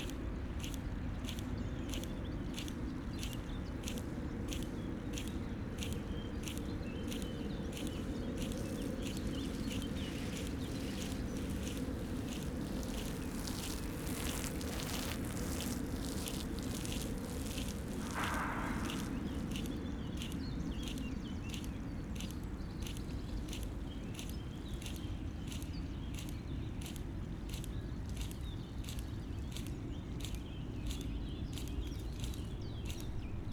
Friedhof Columbiadamm, Berlin - irrigation system
Friedhof Columbiadamm cemetery, irrigation system, distant morming rush hour traffic noise
(SD702, S502 ORTF)